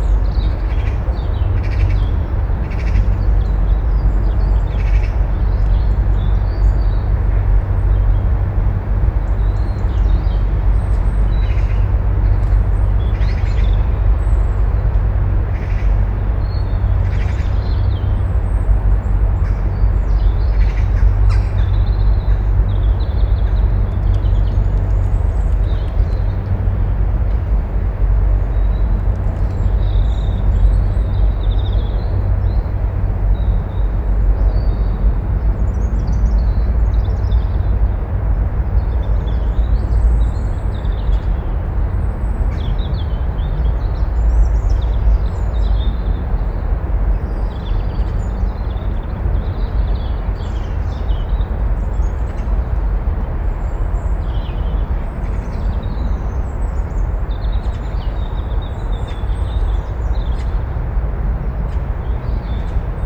Safe in this enclave, the weight and wash of movement presses in. Resting above this mass, the passing clatter of a wheelbarrow, the chatter of magpies and the encircling rustle of wildlife growing familiar with my presence.